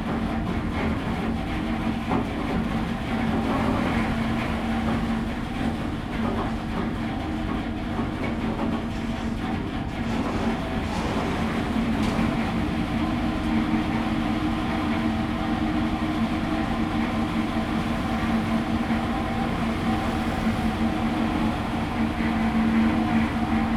興港北街, Magong City - Ice making factory
In the fishing port, Ice making factory, Ice delivery to large fishing vessels
Zoom H2n MS+XY
Magong City, Penghu County, Taiwan, 23 October 2014, 08:59